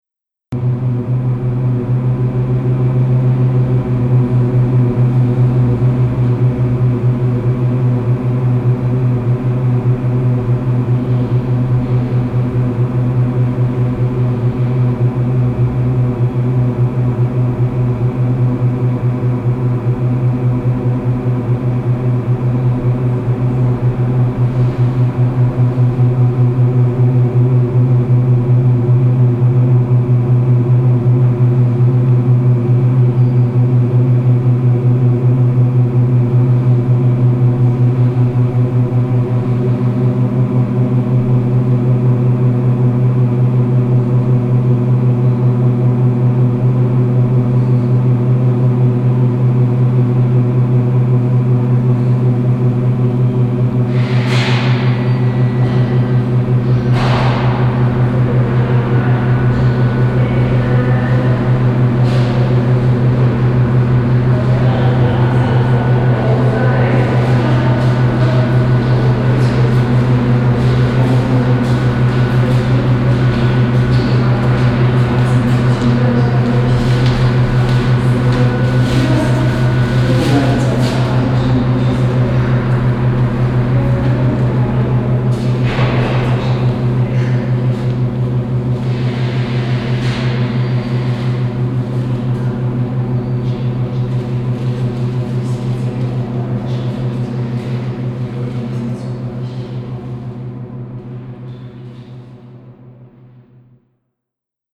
Ostviertel, Essen, Deutschland - essen, old synagogue, beverage dispenser resonance
Im seitlichen Vorraum zur Haupthalle der Synagoge. Eine Ansammlung von Getränkeautomaten. Der Klang der Kühlaggregate und der Lüftung der Automaten.
Projekt - Stadtklang//: Hörorte - topographic field recordings and social ambiences